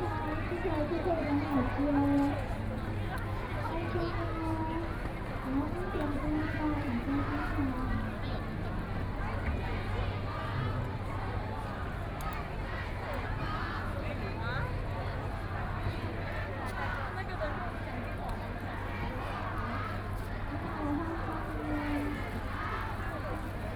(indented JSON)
{
  "title": "淡水區, New Taipei City - Holiday",
  "date": "2014-04-05 17:27:00",
  "description": "A lot of tourists, Protest crowd walking through\nPlease turn up the volume a little. Binaural recordings, Sony PCM D100+ Soundman OKM II",
  "latitude": "25.17",
  "longitude": "121.44",
  "altitude": "11",
  "timezone": "Asia/Taipei"
}